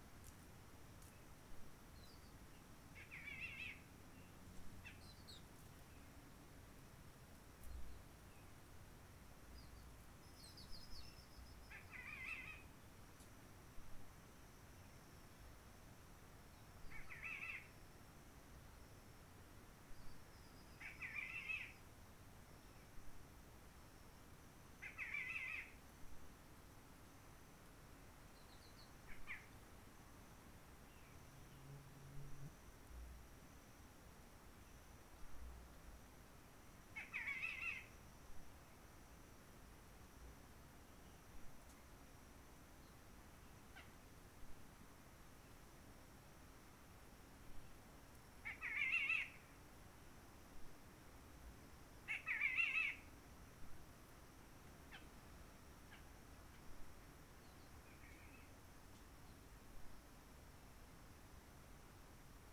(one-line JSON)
{"title": "Roche Merveilleuse, Réunion - CHANT DU ZOISEAU-LA-VIERGE", "date": "2020-04-01 11:42:00", "description": "CHANT DU Z'OISEAU-LA-VIERGE terpsiphone de bourbon, ce chant est assez rare, il faut des heures d'enregistrement pour en avoir un\nGrand Merci au virus COVID-19 pour avoir permis ce silence pour profiter de ces chants d'oiseaux pas encore totalement disparus, avec l'arrêt du tourisme par hélicoptère!", "latitude": "-21.12", "longitude": "55.48", "altitude": "1455", "timezone": "Indian/Reunion"}